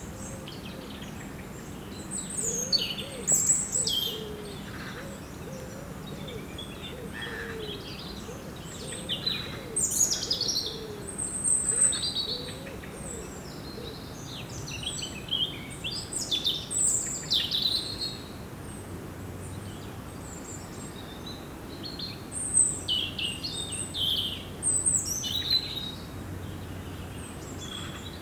Poses, France - Blackbird
A blackbird is singing near a beautiful pathway.